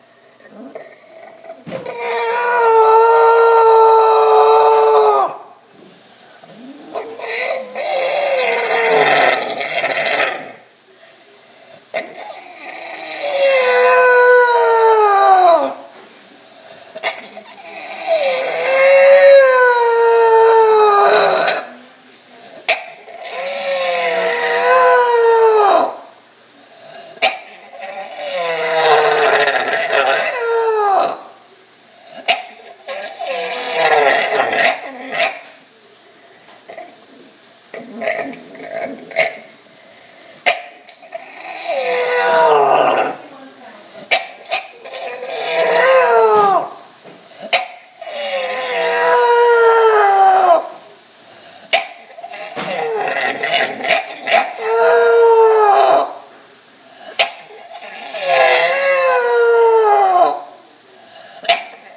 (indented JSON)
{
  "title": "Unearthly Sounds from a Workplace, Houston, Texas - Distressed!",
  "date": "2012-11-29 08:04:00",
  "description": "My wife recorded this on an Iphone 4s while working at her vet clinic. The sounds are that of an English Bulldog immediately after waking from a surgery performed to at least partially relieve a condition common to these dogs that constricts their airways. He was scared, on morphine and just had a breathing tube removed from his throat. The surgery was a success, although he will likely sound freakishly awful at all times for his entire life, as most bulldogs do.",
  "latitude": "29.72",
  "longitude": "-95.49",
  "altitude": "22",
  "timezone": "America/Chicago"
}